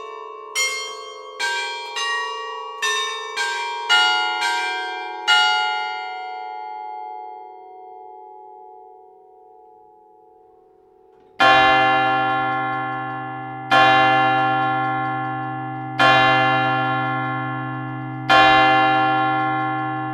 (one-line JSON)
{"title": "Pl. des Héros, Arras, France - Carillon - Beffroi - Arras", "date": "2020-06-17 10:00:00", "description": "Arras (Pas-de-Calais)\nCarillon du beffroi d'Arras - Ritournelles automatisées\nl'heure - le quart-d'heure - la demi-heure - les trois-quart-d'heure", "latitude": "50.29", "longitude": "2.78", "altitude": "77", "timezone": "Europe/Paris"}